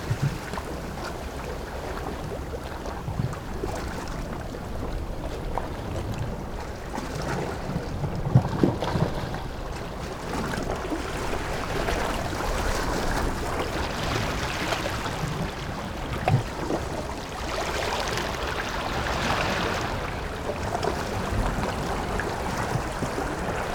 Keelung, Taiwan - Waves
Waves, Sony PCM D50